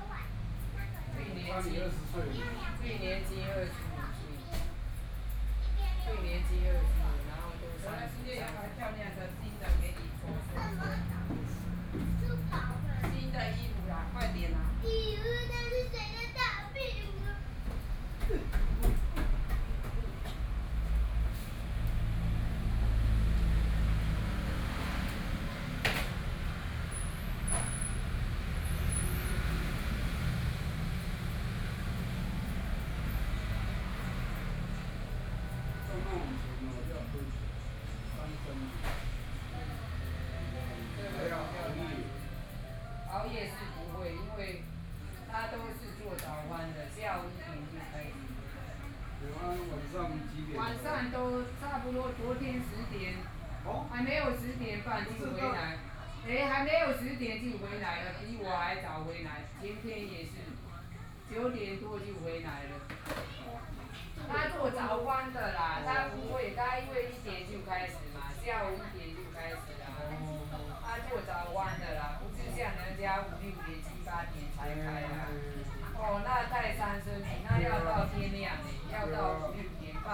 Beitou - In the barber shop
In the barber shop, Female hairdresser dialogue between customers, Barber's family from time to time, Binaural recordings, Zoom H6+ Soundman OKM II
Taipei City, Taiwan